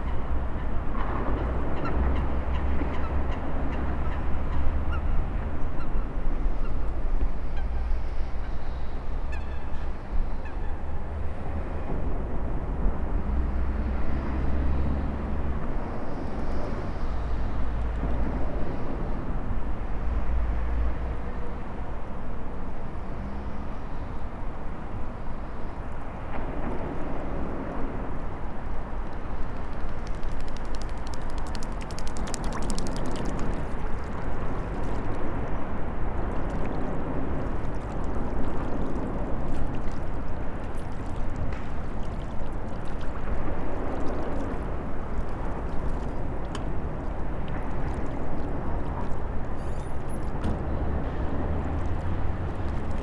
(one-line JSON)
{"title": "Binckhorst, Den Haag - Birds", "date": "2012-02-06 19:04:00", "description": "Some ducks and coots swimming and making some interesting sounds. At 2:25, a small bird came to visit them by flying very close to the water. In the background you can hear the cars driving over the carbridge at the Binckhorstlaan.\nRecorded using a Senheiser ME66, Edirol R-44 and Rycote suspension & windshield kit.", "latitude": "52.06", "longitude": "4.34", "altitude": "1", "timezone": "Europe/Amsterdam"}